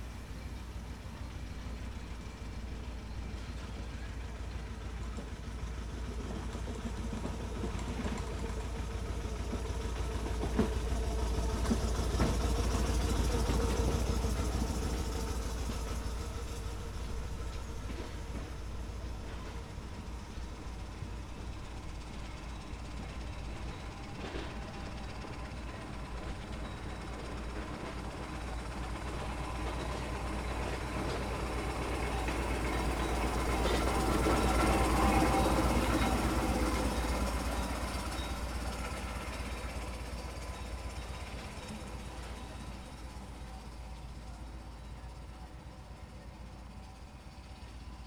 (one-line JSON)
{"title": "Park Side, Checkendon - Listening on the bench next to the carpark", "date": "2018-10-21 11:39:00", "description": "Listening to Checkendon Exiles v Wheatley King and Queen in the Upper Thames Valley Sunday League match. I arrived a few minutes before half-time. This recording was made from the bench next to the car park. I made the recording with a Tascam DR-40.", "latitude": "51.54", "longitude": "-1.04", "altitude": "172", "timezone": "Europe/London"}